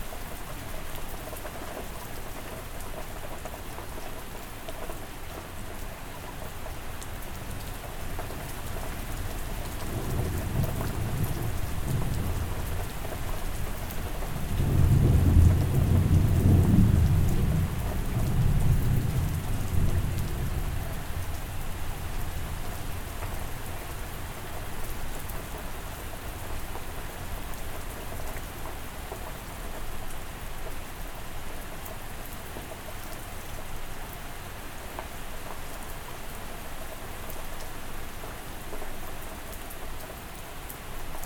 A big threatening storm, on a wet hot evening.
5 June 2015, 20:42